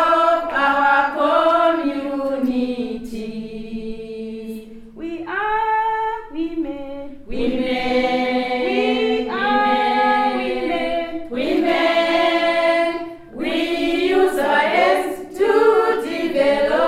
the women of Zubo's Sikalenge Women's Forum get up for a song after a long meeting... We are women...!
Zubo Trust is a women’s organization bringing women together for self-empowerment.
Zimbabwe, 14 June 2016